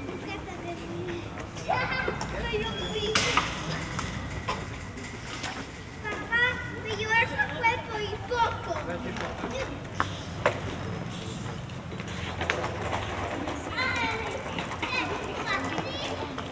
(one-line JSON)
{"title": "Parc Faider, Ixelles, Belgique - Children playing", "date": "2022-03-01 16:30:00", "description": "Construction site nearby, a few birds.\nTech Note : Ambeo Smart Headset binaural → iPhone, listen with headphones.", "latitude": "50.83", "longitude": "4.36", "altitude": "81", "timezone": "Europe/Brussels"}